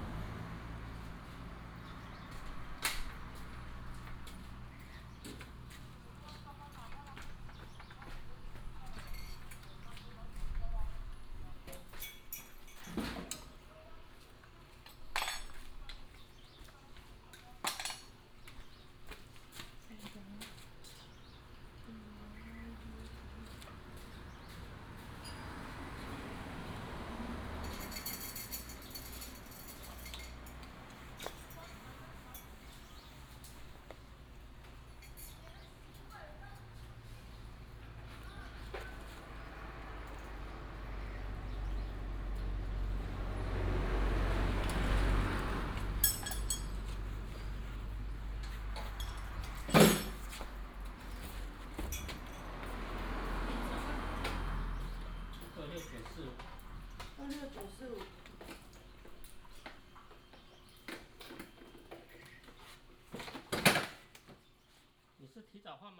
順全機車行, Jinlun, Taimali Township - Motorcycle repair shop
Motorcycle repair shop, Traffic sound, Bird cry
Binaural recordings, Sony PCM D100+ Soundman OKM II